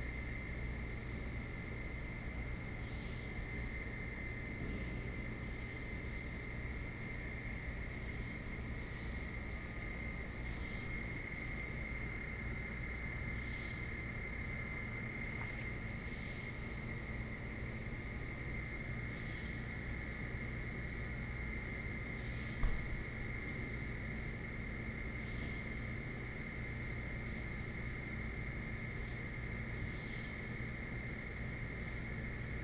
Xinxing Rd., Linbian Township - Late night on the street
Late night on the street, Traffic sound, Seafood Restaurant
Pingtung County, Taiwan